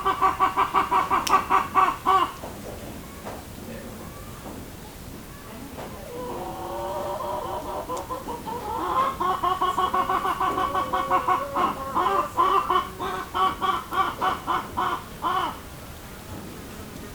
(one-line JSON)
{"title": "Lavacquerie, France - Hens", "date": "2015-08-19 11:20:00", "description": "Hens near the site of Esserres, place of festival and exhibitions\nBinaural recording with Zoom H6", "latitude": "49.68", "longitude": "2.10", "altitude": "169", "timezone": "Europe/Paris"}